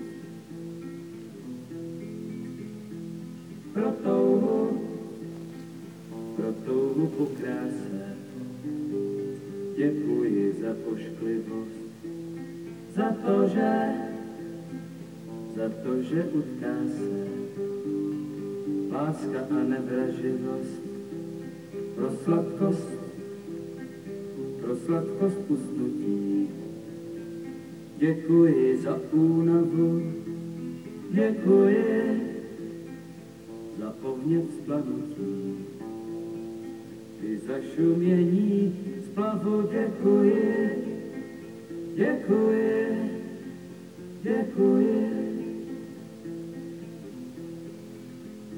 praha, museum of communism - muzeumssong